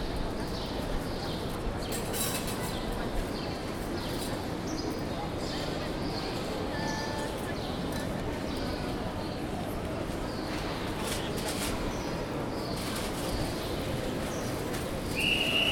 Saint-Vincent de Paul, Paris, France - customers, waitress and birds in a station